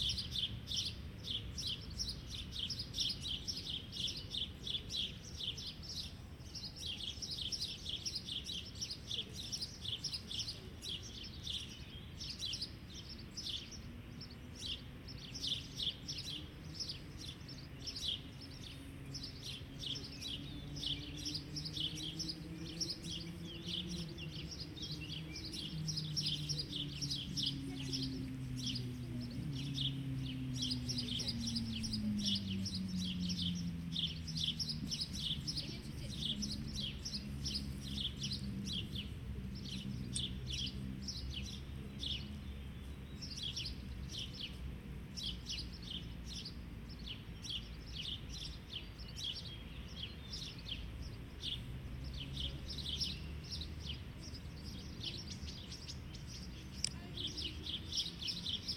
Ogród Saski, Warszawa, Polska - A glade in the Saxon Garden
A quiet afternoon in the Saxon Garden in Warsaw - chirping sparrows in the bushes nearby - people lying on the grass - distant cars and trams -
Recording made with Zoom H3-VR, converted to binaural sound